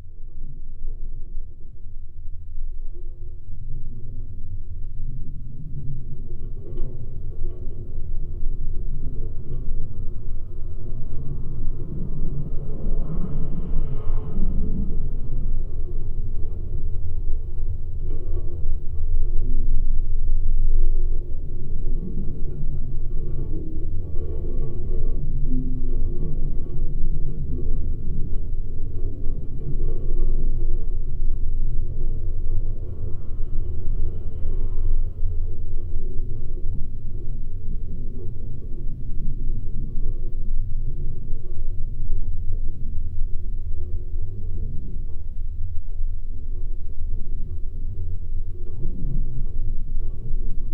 Uzpaliai, Lithuania, greenhouse
empty greenhouse. windy day. contact mics and geophone on the constructions of the greenhouse.
3 January 2021, 15:50